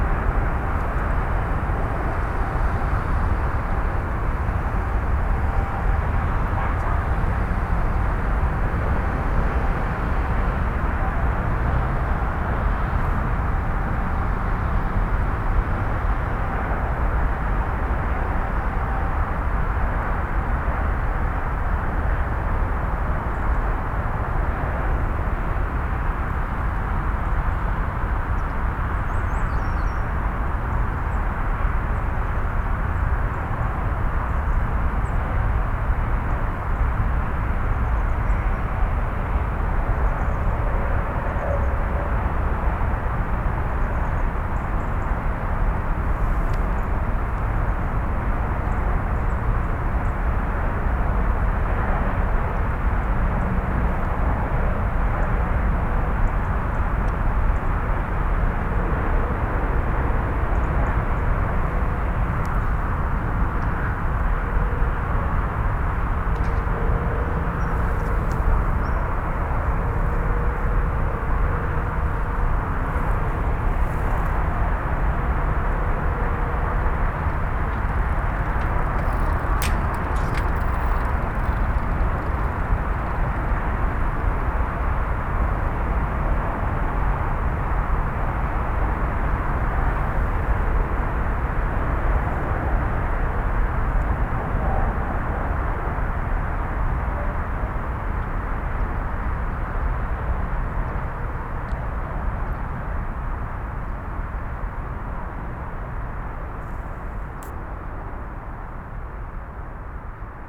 Weetfeld, Hamm, Germany - Zur Gruenen Aue 1
some car and cycle traffic, birds around the wetlands south of Wilhelm Lange Strasse…
vereinzelt Motor- und Fahrradverkehr; Vögel um die Feuchtgebiete südlich der Wilhelm-Lange Strasse…
Before due to meet some representatives of an environmental activist organization in Weetfeld, I’m out exploring the terrain, listening, taking some pictures…
Ein paar Tage vor einem Treffen mit Vertretern der “Bürgergemeinschaft gegen die Zerstörung der Weetfelder Landschaft”, fahre ich raus, erkunde etwas das Terrain, höre zu, mache ein paar Fotos…
“Citisen Association Against the Destruction of the Environment”
(Bürgergemeinschaft gegen die Zerstörung der Weetfelder Landschaft)